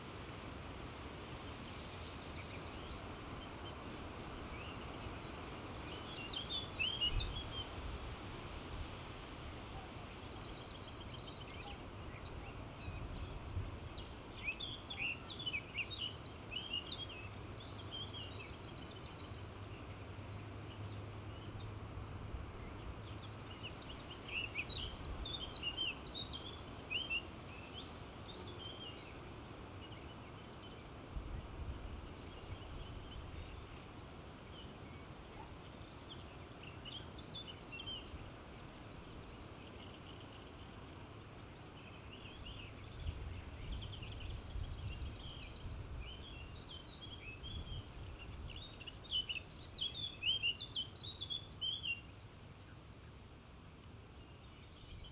Neuküstrinchen, Deutschland - river Oder bank, wind
at the river Oder, german / polish border, wind in trees
(Sony PCM D50, DPA4060)